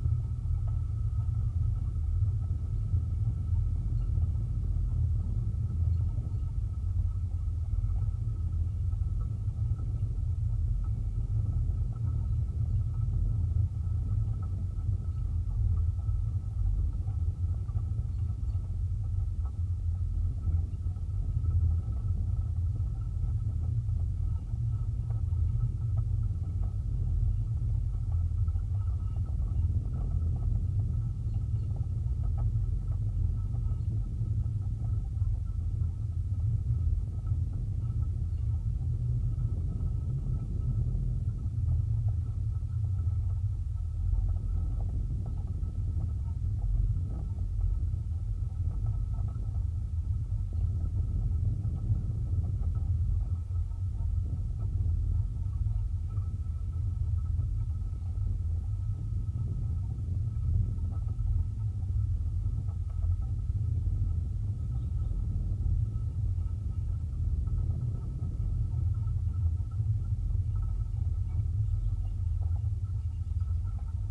Contact mics attached to a ¾ inch (2 cm) in diameter metal cable of a cable railing fence on bluff overlooking Missouri River and Labadie Energy Center off of the Powerline Trail in Klondike Park. Low sounds. Best with headphones.